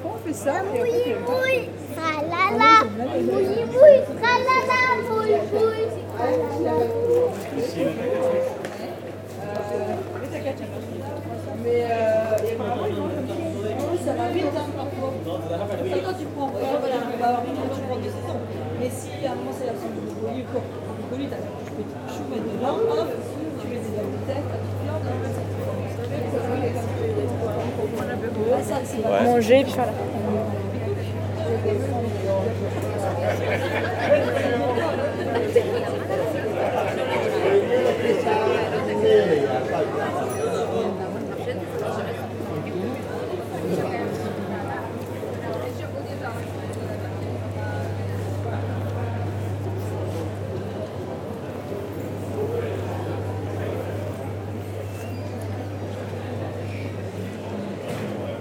Bruxelles, Belgium - Brussels Grand Place
The Brussels Grand-Place (french) Grote Markt (dutch). It's the central place of Brussels, completely covered with cobblestones. Very beautiful gothic houses and the main town hall. During this period, very much Spanish and Chinese tourists. An old woman, beggar. Photos, discussions, wind, touristic ambiance.